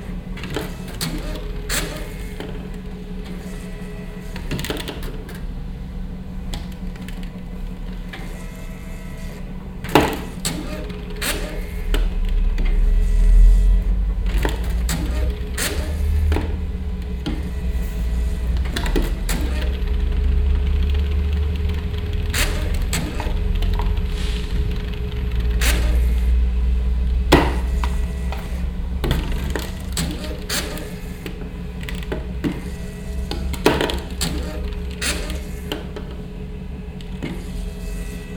refrath, lustheide, billigmarkt, leergutautomatenraum
noch nicht auf der google map sichtbar aber mittlerweile gebaut - uniformes gebäude einer billigmarktkette - hier klänge bei der leergutrückgabe im seperat dafür eingerichteten raum
soundmap nrw - social ambiences - sound in public spaces - in & outdoor nearfield recordings